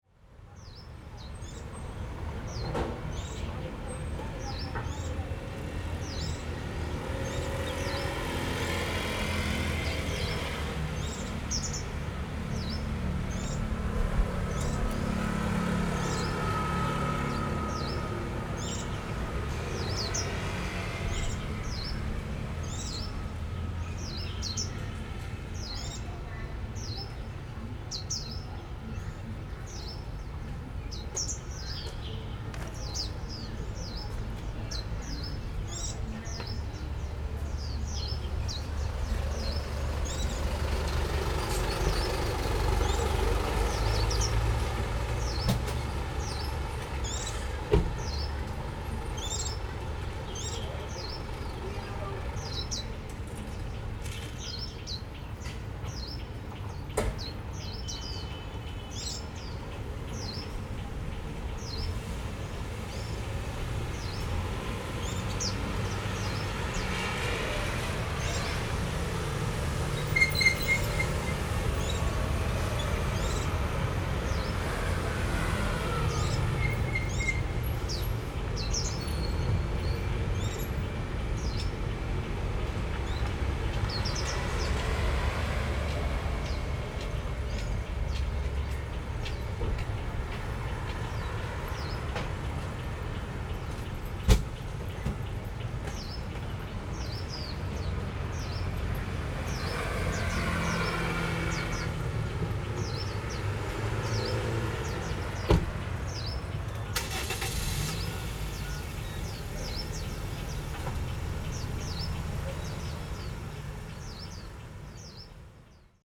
Ren’ai Rd., Yingge Dist., New Taipei City - Birds and Traffic Sound
In the square in front of the station, Birds singing, Traffic Sound
Zoom H4n XY+Rode NT4
New Taipei City, Taiwan, 29 November, ~15:00